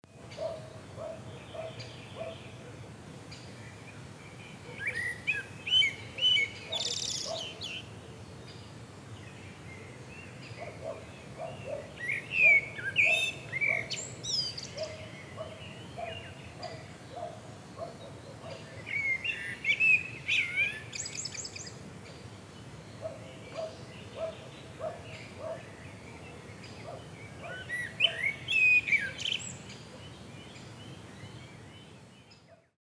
Kastav, Sporova jama, Blackbirds
Blackbirds in forest near Kastav, Spring time.